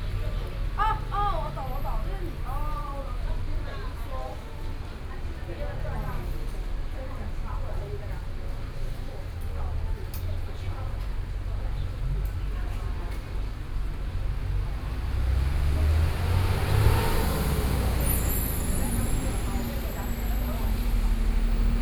in front of the Bus stop, Sony PCM D50 + Soundman OKM II
Taipei City, Taiwan, 30 September, ~15:00